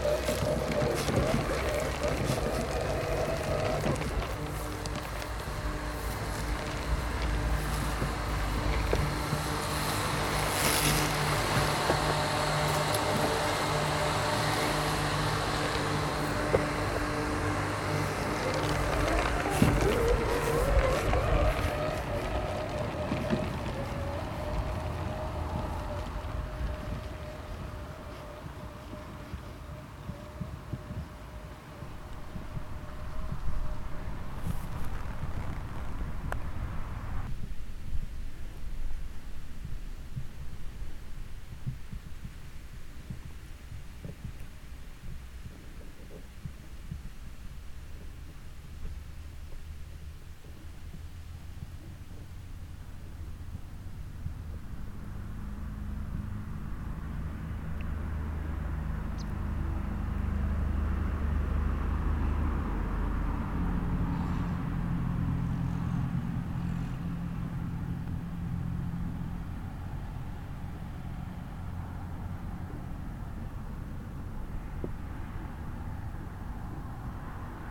Rte d'Aix, Chindrieux, France - Robi
Près du terrain de football de Chindrieux, Robi le robot tondeur parcours la pelouse en obliques perpétuelles. Circulation sur la RD 991.